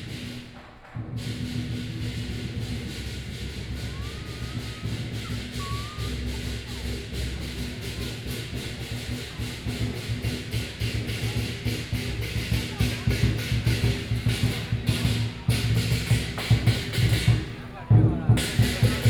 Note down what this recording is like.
In front of the temple, Traditional temple activities, Percussion and performing rituals, Crowd cheers, Binaural recordings, Sony PCM D50 + Soundman OKM II